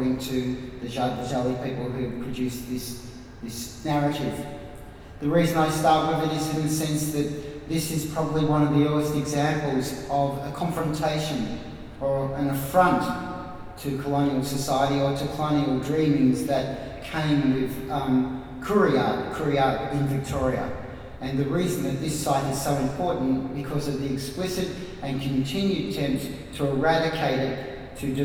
neoscenes: Talking Blak - Tony Birch